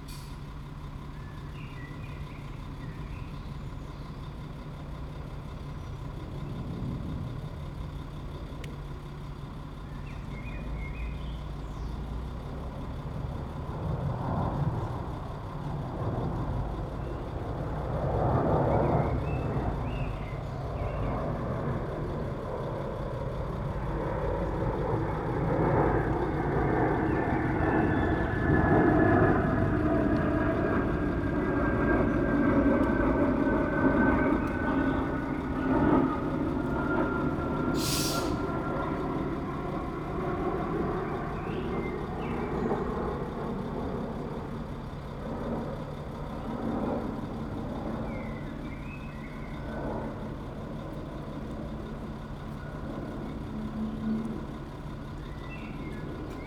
Branik station; announcements, train in & out, atmosphere, Praha-Braník, Praha, Czechia - Branik station; announcements, train in & out, atmosphere
Small station atmopshere. There are 4 trains per hour here. A blackbird sings in the mid distance.